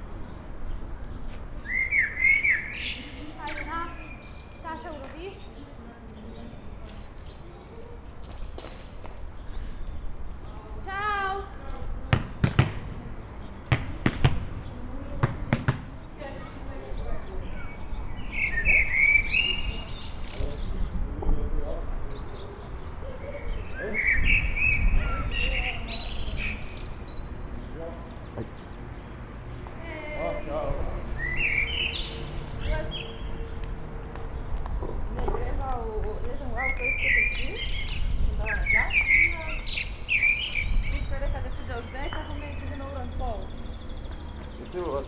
Gradnikova, Nova Gorica, Slovenia - Birds and ball
The suund was recorded in an afternoon in the city, birds are mixed with a bouncing ball and some chatting.